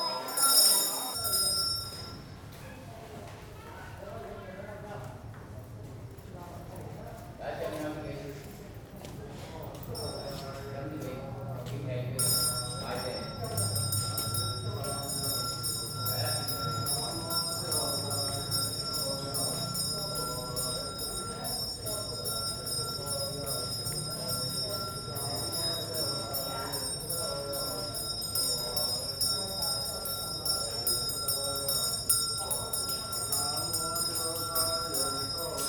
Pulau Pinang, George Town, Penang, Malaysia - drone log 23/02/2013 b
Goddes of Mercy Temple, bells
(zoom h2, binaural)
23 February 2013